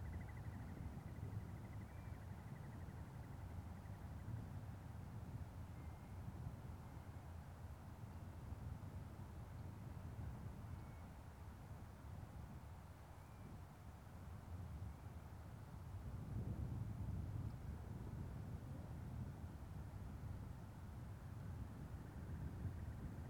South, Island - In the midlle of lava fields Mýrdalssandur - morning
Iceland, 21 July 2013